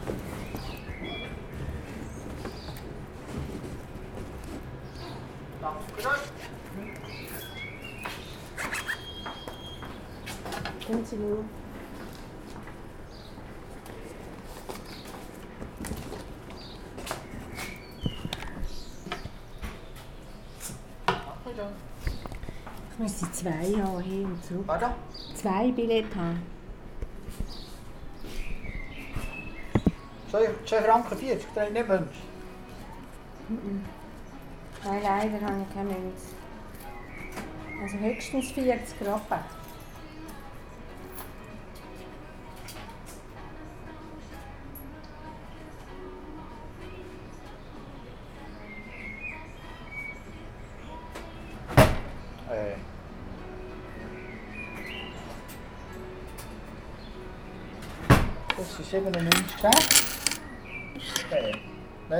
Bern, Marzilibahn
Marzilibahn von Bundeshaus ins Marziliquartier an der Aare, Gewichtsseilbahn, Billettbezug am Schalter
Bern, Schweiz, 10 June 2011